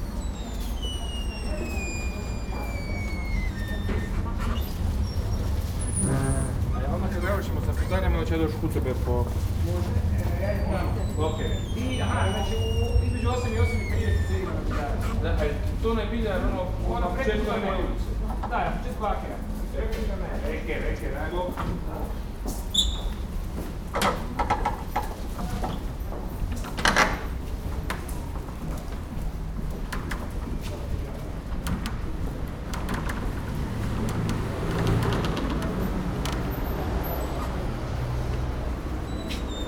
Düsseldorf, Heinrich Heine Allee, Kaufhof, door - düsseldorf, heinrich heine allee, kaufhof, door
the sounding entrance door of the old kaufhof building - some passengers and shoppers passing by
soundmap d - social ambiences and topographic field recordings